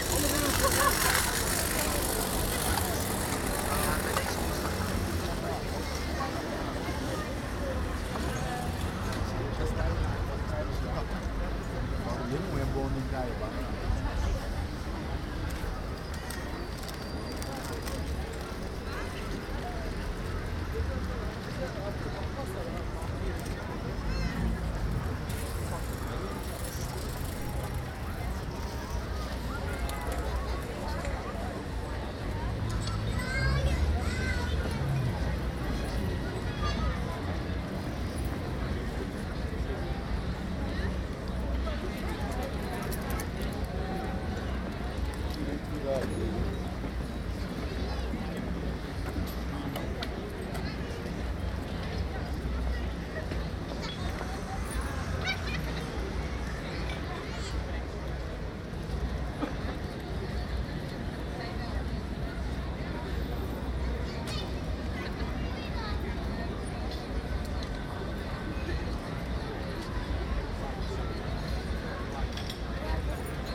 Binuaral recording of the general atmosphere.
Den Haag, Netherlands